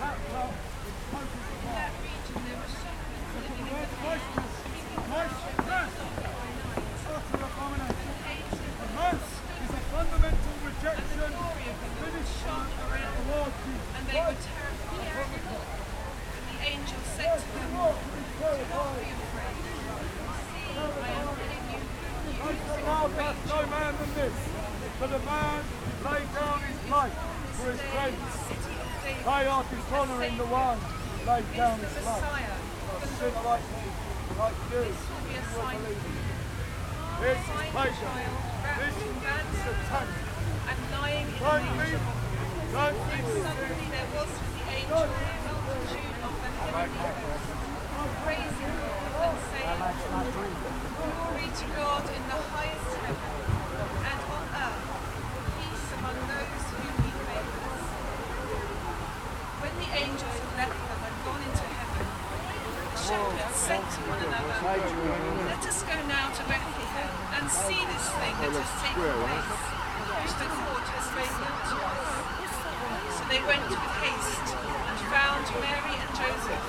London, Great Britain. - Christmas in Trafalgar Square 2012-Crashed by a man with a different opinion.
Christmas in Trafalgar Square, London 2012. The whole ceremony crashed by a man with a different opinion about the celebration going on, shouting out his messages to the crowd. First a civilian and the salvation army followed him around the square then a police women on a horse. In the end a police car came and he gave up.
Recorded with a Zoom H4n.